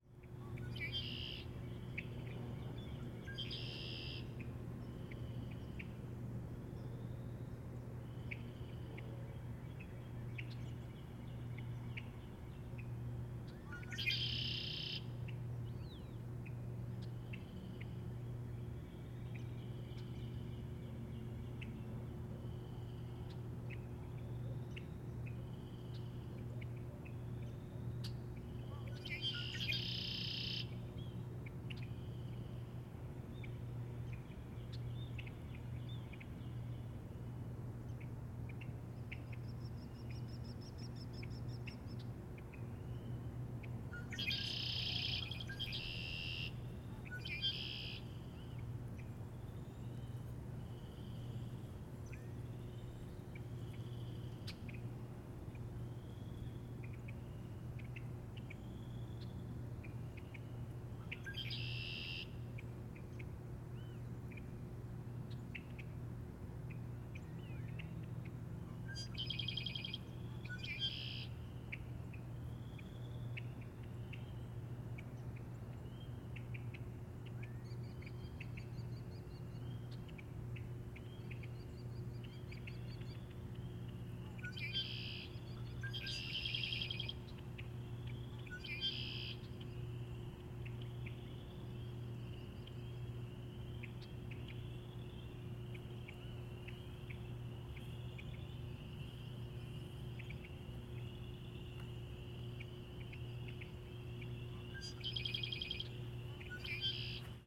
Greentree Park, Kirkwood, Missouri, USA - Greentree Park Red-winged Blackbirds
Sounds of spring with calls of red-winged blackbirds at dusk in this wetland park. Also the sounds of other birds, chorus frogs and power substation.